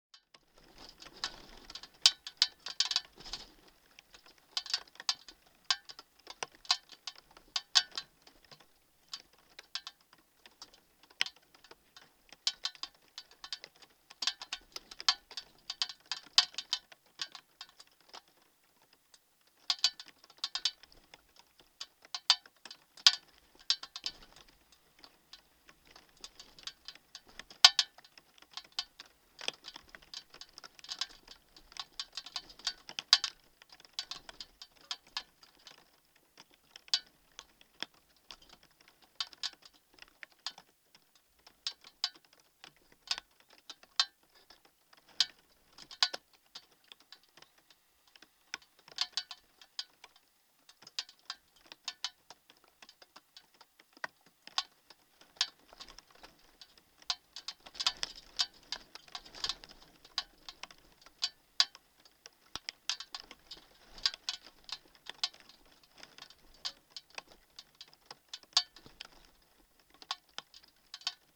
Lithuania, Nolenai, found object: empty beer can
contact microphone on empty beer can..rain is starting
April 2012